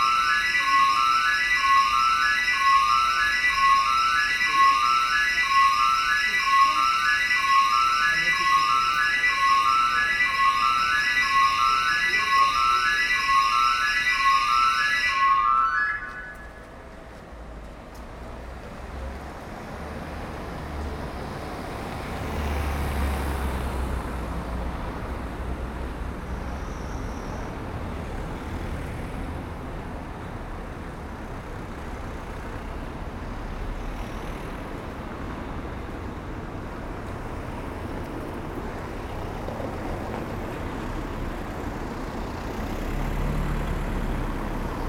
{
  "title": "Mons, Belgique - Alarm",
  "date": "2018-12-24 15:00:00",
  "description": "Some junkies had a drink into a building, where they were uninvited. They made the alarm ring. Nobody cares.",
  "latitude": "50.46",
  "longitude": "3.96",
  "altitude": "40",
  "timezone": "Europe/Brussels"
}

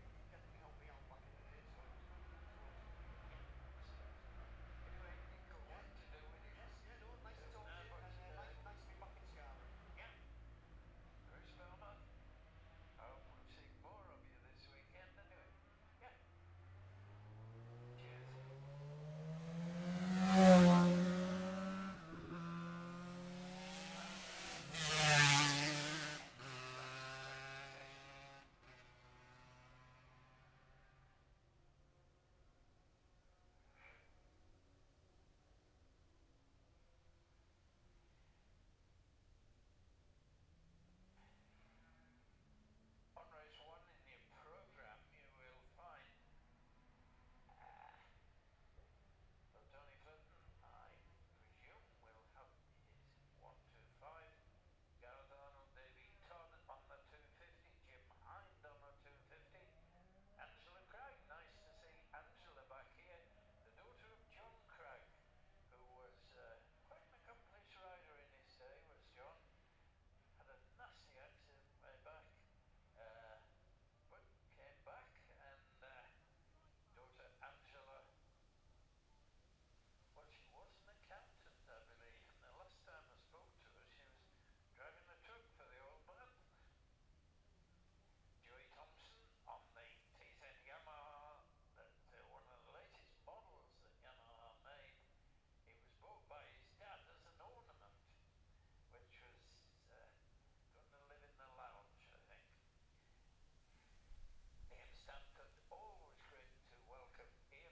{
  "title": "Jacksons Ln, Scarborough, UK - gold cup 2022 ... lightweight practice ...",
  "date": "2022-09-16 09:40:00",
  "description": "the steve henshaw gold cup 2022 ... lightweight practice ... dpa 4060s on t-bar on tripod to zoom f6 ...",
  "latitude": "54.27",
  "longitude": "-0.41",
  "altitude": "144",
  "timezone": "Europe/London"
}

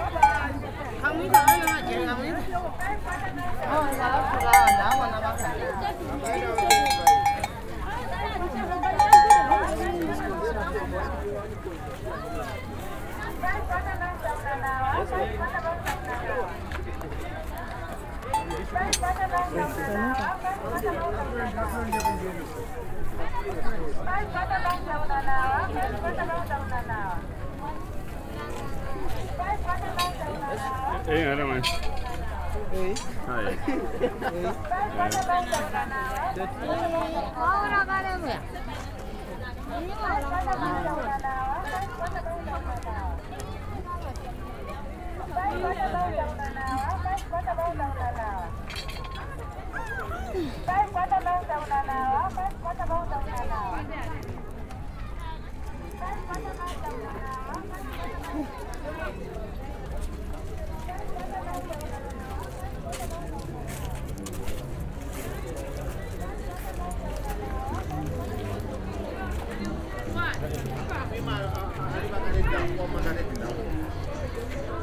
{
  "title": "Street Market, Choma, Zambia - Cow bells",
  "date": "2018-08-13 11:50:00",
  "description": "discovering a trader selling bells for cattle...",
  "latitude": "-16.81",
  "longitude": "26.99",
  "altitude": "1317",
  "timezone": "Africa/Lusaka"
}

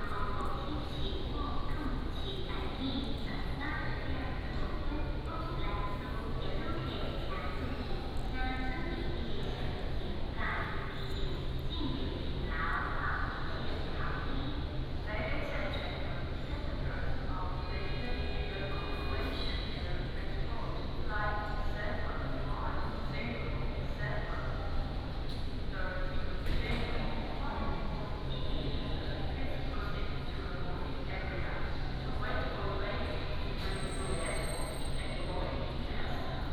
{
  "title": "Taitung Airport, Taiwan - At the airport",
  "date": "2014-10-28 12:43:00",
  "description": "At the airport",
  "latitude": "22.76",
  "longitude": "121.11",
  "altitude": "39",
  "timezone": "Asia/Taipei"
}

